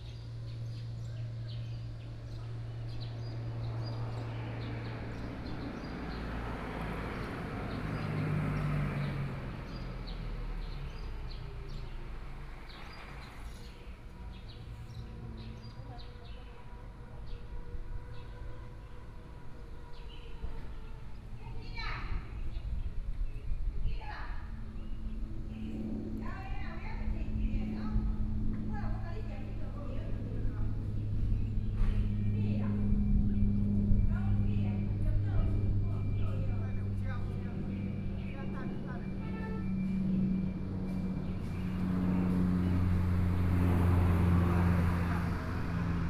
招寶宮, Su'ao Township 岳明里 - In the temple plaza
In the temple plaza, Hot weather, Traffic Sound, Birdsong sound, Small village
28 July 2014, ~17:00